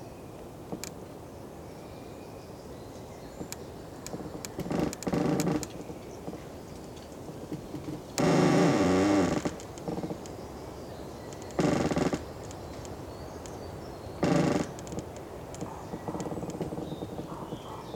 Lithuania, half fallen tree
half fallen tree loaning on other tree
18 April 2020, ~17:00